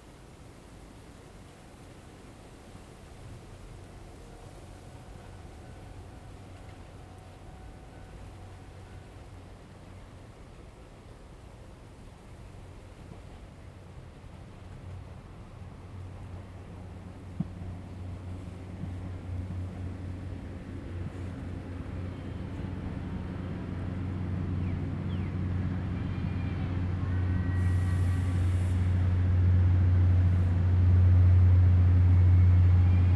福文村, Chihshang Township - Near the station
Near the station, Train arrival and departure, Very hot weather
Zoom H2n MS+ XY